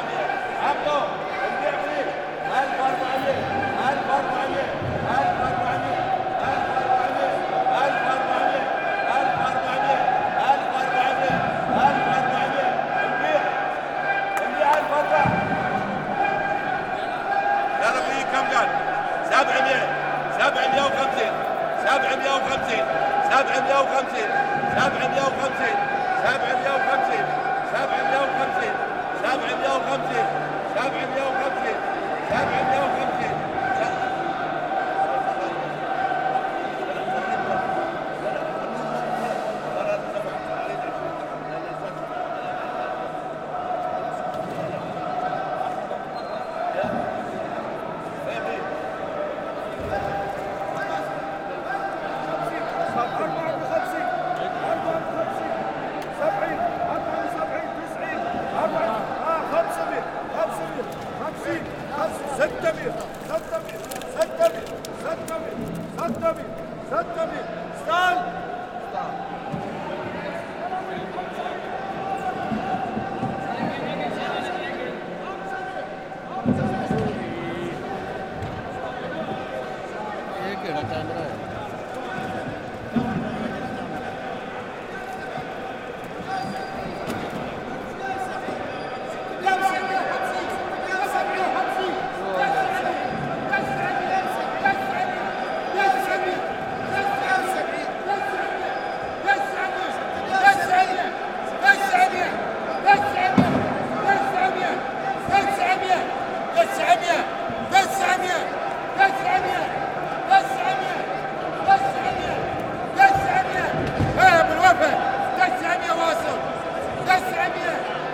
Every day at 5pm, the fish merchants display their catches in a circular space in the entrance of Souq Al Jubail. This recording is walking amongst the merchants as they shouted out their prices.